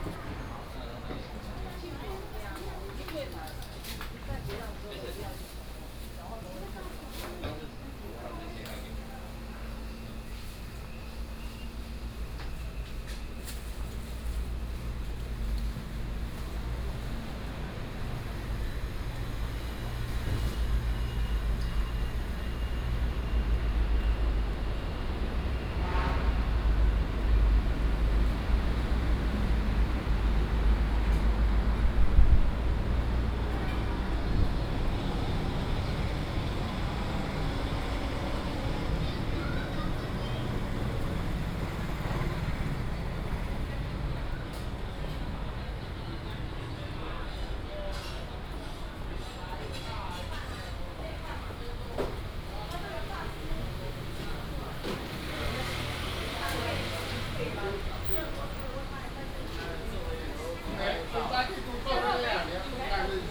南崁菜市場, Luzhu Dist. - Walking in the traditional market
Walking in the traditional market, traffic sound
Taoyuan City, Taiwan, 27 July 2017, 08:18